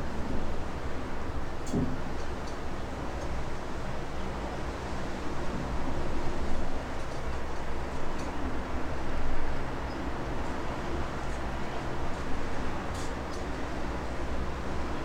Galatas, Crete, church in the jetty
inside the little church on a jetty